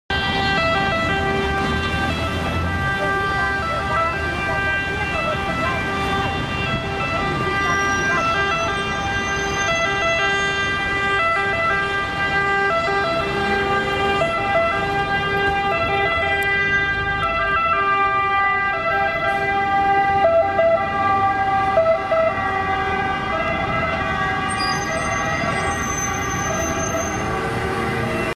an ambulance drives by near St. John in Lateran in Rome
Rom, Italien, 15 April 2011, ~16:00